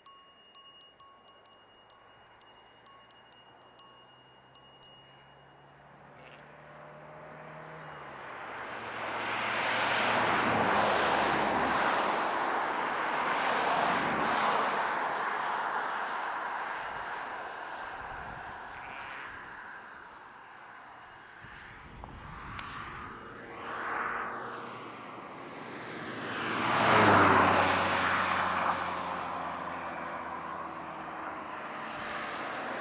Punat, Skilift, Sheep
Ski lift with soundscape